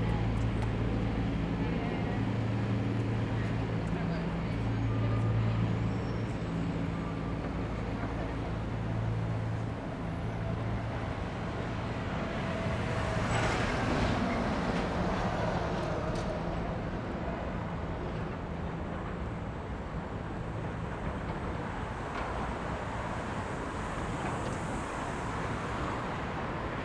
A short walk around Lexington Ave., starting at the Chrysler Building and moving up towards E47st street and then Park Ave.
General sounds of traffic, pedestrians, constructions, and footsteps.